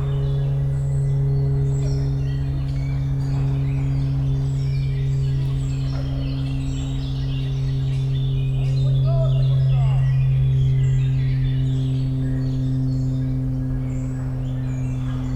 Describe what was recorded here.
spring ambience in Park Pszczelnik, Siemianowice, distant construction work noise, an aircraft appears and creates a Doppler sound effect with a long descending tone, (Sony PCM D50, DPA4060)